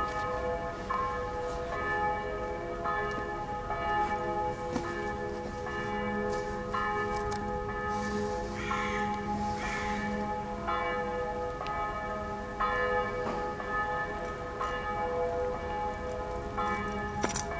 {
  "title": "cologne, lothringer street, cars and church bells",
  "date": "2009-08-19 13:18:00",
  "description": "church bells while car door closes, traffic is passing by, a raven flying and chirping in a nearby tree\nsoundmap nrw: social ambiences/ listen to the people in & outdoor topographic field recordings",
  "latitude": "50.92",
  "longitude": "6.95",
  "altitude": "52",
  "timezone": "Europe/Berlin"
}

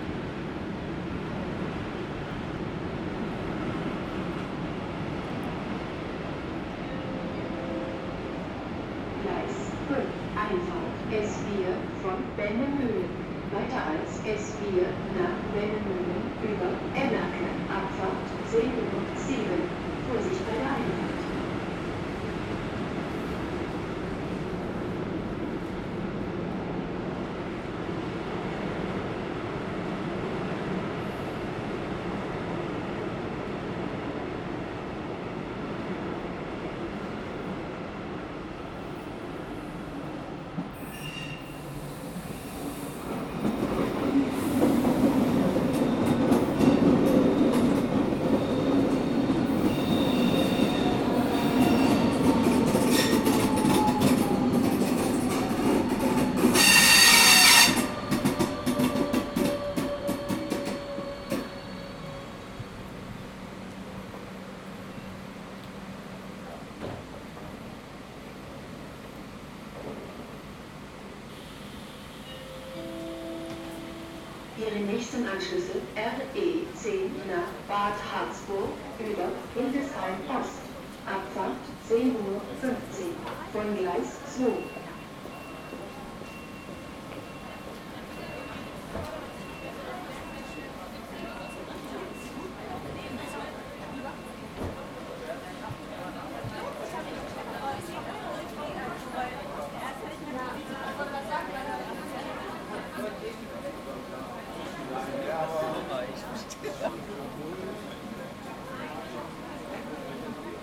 {
  "title": "Hildesheim, Deutschland - Pendler",
  "date": "2015-04-21 09:23:00",
  "description": "S-Bahn fährt ein, Pendler steigen aus",
  "latitude": "52.16",
  "longitude": "9.95",
  "altitude": "89",
  "timezone": "Europe/Berlin"
}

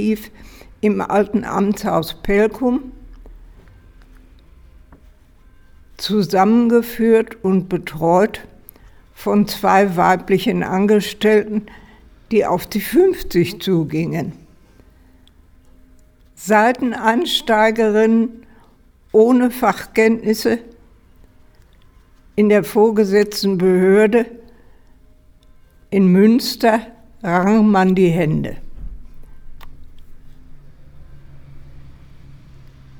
{
  "title": "Sitzungssaal Amtshaus Pelkum, Hamm, Germany - Ilsemarie von Scheven reads her bio",
  "date": "2014-11-04 12:00:00",
  "description": "Ilsemarie von Scheven (06.12.1921 – 16.02.2019) liest Stationen ihres Werdegangs.",
  "latitude": "51.64",
  "longitude": "7.75",
  "altitude": "63",
  "timezone": "Europe/Berlin"
}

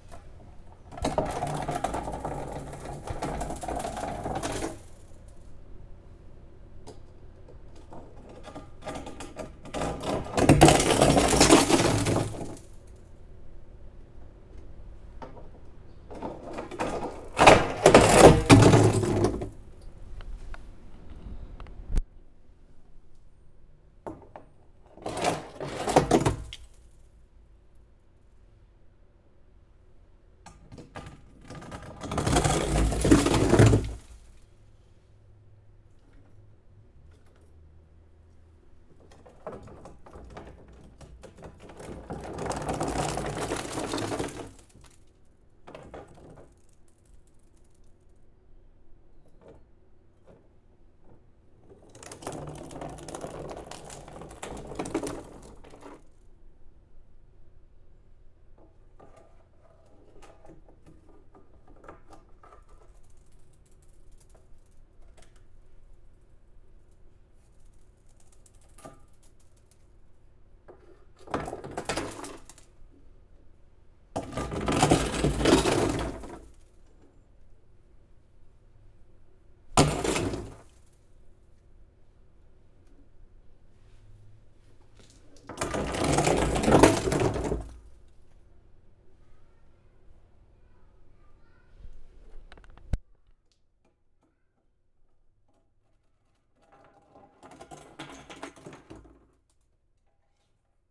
{
  "title": "Montreal: Durocher, Outremont (park) - Durocher, Outremont (park)",
  "date": "2009-02-27 15:30:00",
  "description": "equipment used: Zoom H4\nrecorded in a park",
  "latitude": "45.52",
  "longitude": "-73.61",
  "altitude": "73",
  "timezone": "America/Montreal"
}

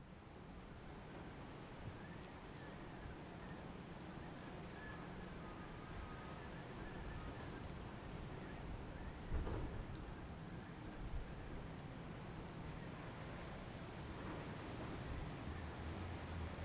{
  "title": "二崁村, Xiyu Township - Wind",
  "date": "2014-10-22 12:54:00",
  "description": "Small village, Wind\nZoom H2n MS+XY",
  "latitude": "23.61",
  "longitude": "119.52",
  "altitude": "26",
  "timezone": "Asia/Taipei"
}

